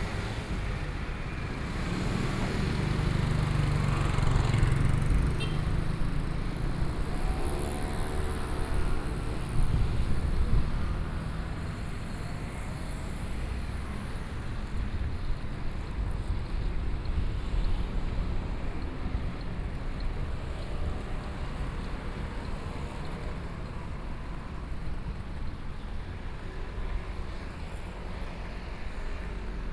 {"title": "Sec., Zhonghua Rd., Neili - traffic noise", "date": "2013-09-16 12:34:00", "description": "Through a variety of vehicle, Sony Pcm D50+ Soundman OKM II", "latitude": "24.97", "longitude": "121.25", "altitude": "127", "timezone": "Asia/Taipei"}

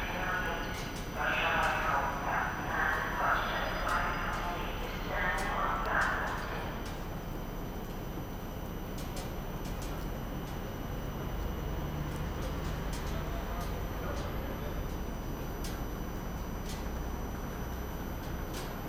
{"title": "Poznan downtown, central train station, platform - train to Warsaw", "date": "2012-12-18 04:58:00", "description": "departure and arival announcements, water drops, glitchy sound of the train nearby.", "latitude": "52.40", "longitude": "16.91", "altitude": "79", "timezone": "Europe/Warsaw"}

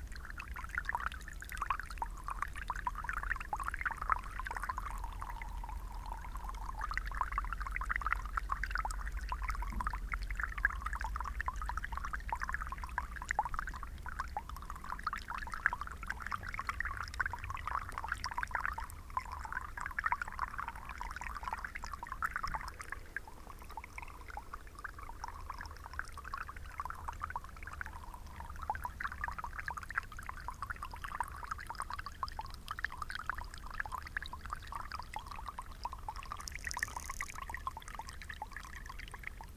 {"title": "ça ruisselle - Chemin du Brizeu", "date": "2013-02-17 14:27:00", "description": "Dans un trou de neige, l'eau se fait entendre.", "latitude": "47.95", "longitude": "6.83", "altitude": "601", "timezone": "Europe/Paris"}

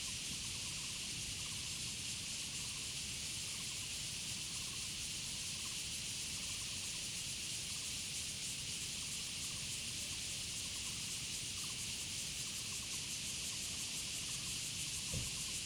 {"title": "玉長公路, Changbin Township - Cicadas and Frogs", "date": "2014-10-09 07:32:00", "description": "Cicadas sound, Frogs sound, Birds singing, Near Highway Tunnel\nZoom H2n MS+XY", "latitude": "23.26", "longitude": "121.39", "altitude": "364", "timezone": "Asia/Taipei"}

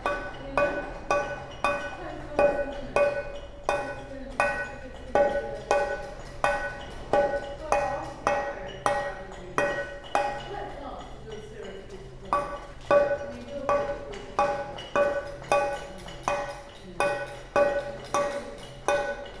{"title": "Project space, Drill Hall, Portland, Dorset, UK - Weekend stone carving workshop - retracing Joe's footsteps around Portland", "date": "2015-07-24 16:12:00", "description": "I traveled to Drill Hall Gallery specifically because this was a place where Joe Stevens created a rainy day recording; when I got there, Hannah Sofaer was running a Portland stone carving workshop. Portland stone is beautifully musical and particular. In this recording one of the workshop participants is carving out a pregnant lady shape inspired somewhat by the late artist Giacometti. Giacometti never depicted pregnancy so this aspect is a departure but in other elements it is similar to his ladies... the Portland stone is very hard and must be chipped away in tiny increments as you can hopefully hear in the recording. You can also hear the busy road right by the workshop space. The workshop is amazing - Drill Hall Project Space - a large structure adjacent to the impressive Drill Hall Gallery space, full of comrades chipping away at huge blocks of stone with selected chisels. I spoke mostly to Hannah in between recording the amazing sounds of the sonic stone.", "latitude": "50.55", "longitude": "-2.44", "altitude": "98", "timezone": "Europe/London"}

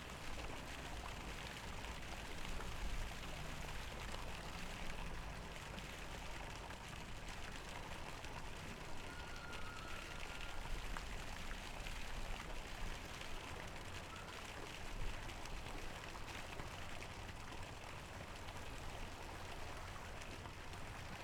{"title": "Huangpu District, Shanghai - The sound of water", "date": "2013-11-28 14:34:00", "description": "The sound of water, Traveling by boat on the river, Binaural recording, Zoom H6+ Soundman OKM II", "latitude": "31.20", "longitude": "121.50", "altitude": "7", "timezone": "Asia/Shanghai"}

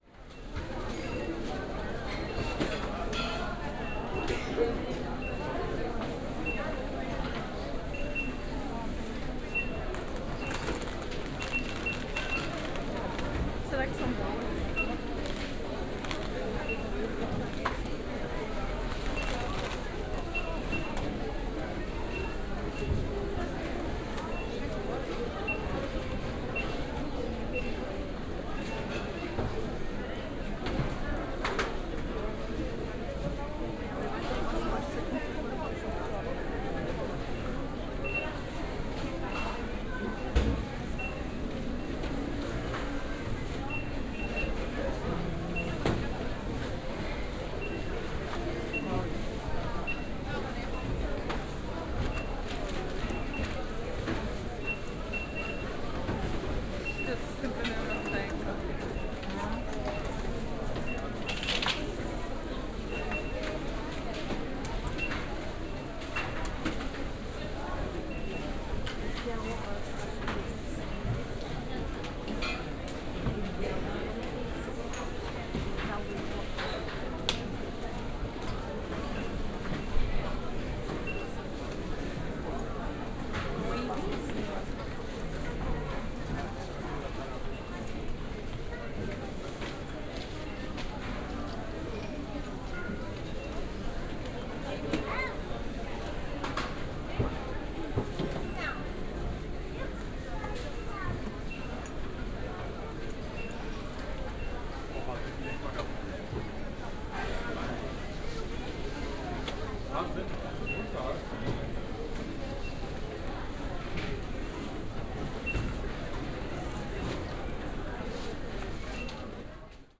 {"title": "Funchal, Pingo Doce Supermarket - at checkout", "date": "2015-05-05 17:03:00", "description": "(binaural) waiting for chekout at the always packed and busy pingo doce supermarket.", "latitude": "32.65", "longitude": "-16.90", "altitude": "26", "timezone": "Atlantic/Madeira"}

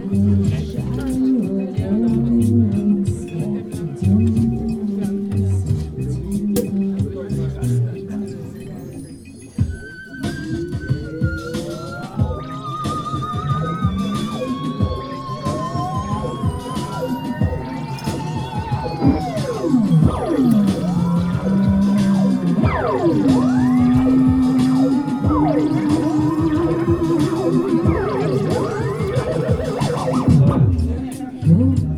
{"title": "neoscenes: Dorit plays the Funny Farm East", "date": "2007-11-03 13:30:00", "latitude": "52.54", "longitude": "13.41", "altitude": "52", "timezone": "Europe/Berlin"}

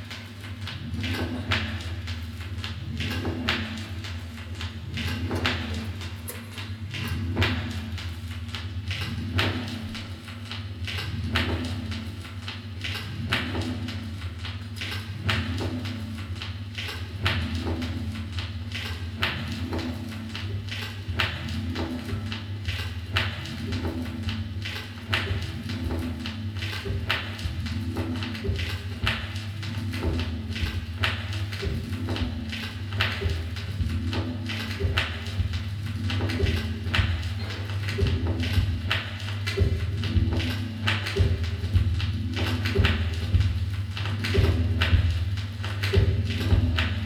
{"title": "Neustadt-Nord, Köln, Deutschland - cologne, stadtgarten, studio 672", "date": "2012-04-23 20:40:00", "description": "Inside the Studio 672 - a small party and concert location and formerly jazz club in the cellar of the main building. The sound of a turntable concert performed by Achim Mohné during a touch label evening.\nsoundmap nrw - social ambiences and topographic field recordings", "latitude": "50.94", "longitude": "6.94", "altitude": "52", "timezone": "Europe/Berlin"}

